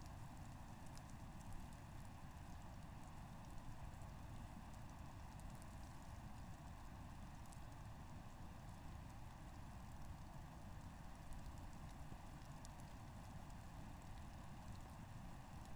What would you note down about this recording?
places small mics on dried bushes. rain is starting and a dam not so far...